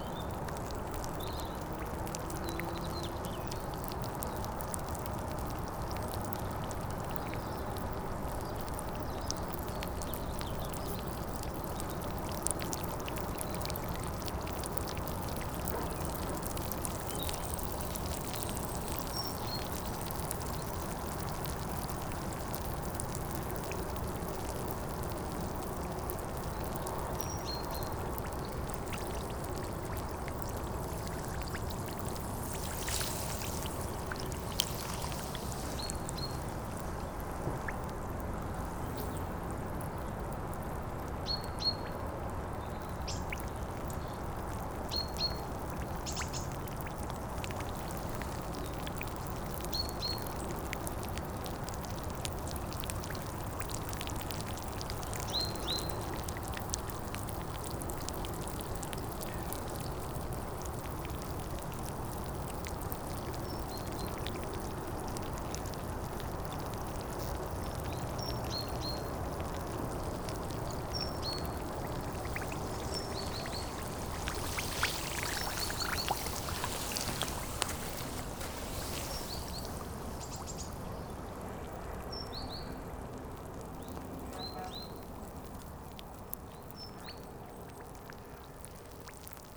Criquebeuf-sur-Seine, France - High tide
The high tide on the Seine river is called Mascaret. It arrives on the river like a big wave. On the mascaret, every beach reacts differently. Here the sand and gravels make a lot of small bubbles.